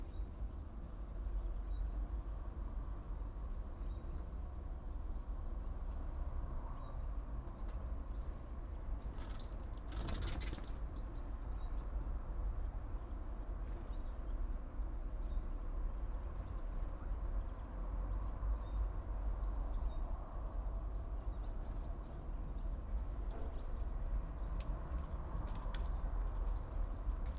all the mornings of the ... - jan 26 2013 sat